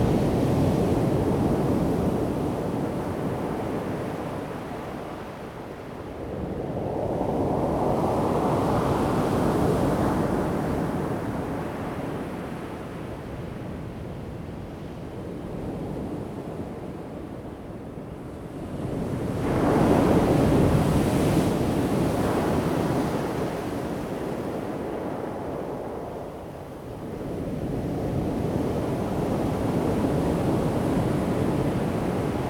At the beach, Sound of the waves, birds sound
Zoom H2n MS+XY